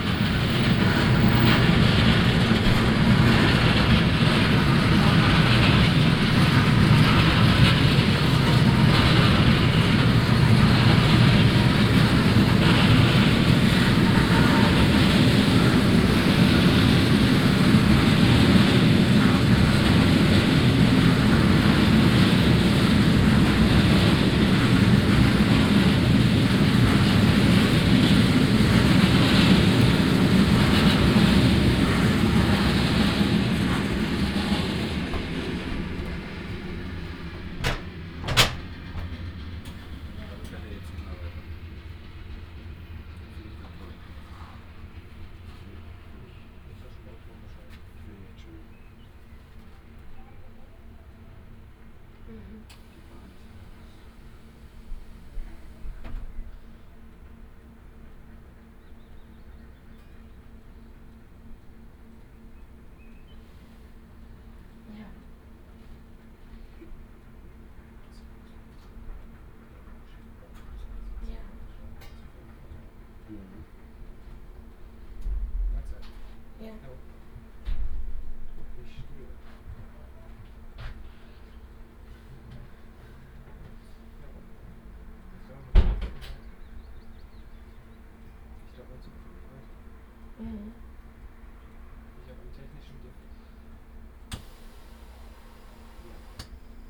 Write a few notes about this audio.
Hamm, Hauptbahnhof, main station. the train can't continue because of an electrical defect. doors are open, people waiting in and outside, making phone calls, talking, ideling. a train passes at the opposite track. a strange periodic hum from a hidden control panel indicates malfunction. (tech note: Olympus LS5, OKM2, binaural.)